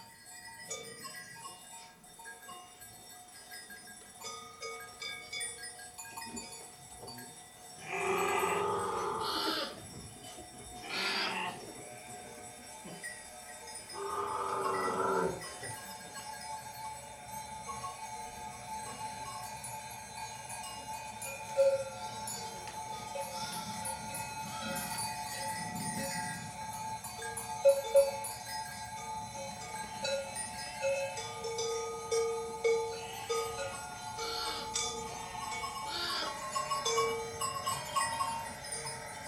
Concert at Der Kanal, Weisestr. - Der Kanal, Das Weekend zur Transmediale: Baignoire
Engineering sound memory manipulator and dear neighbour of DER KANAL, Baignoire, performs live from in to the outside making us look like dreaming sheep, so much did it astonish to travel in sonic spheres like these.
Deutschland, European Union, January 2011